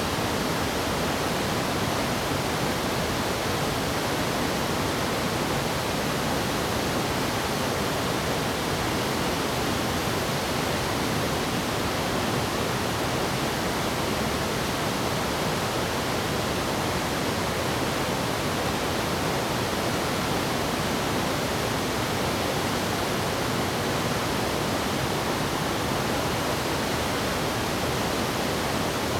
Nanshi River, 烏來區烏來里 - Sound of waterfall
Facing the waterfall, Traffic sound, Birds call
Zoom H2n MS+ XY
New Taipei City, Taiwan, 5 December 2016, ~09:00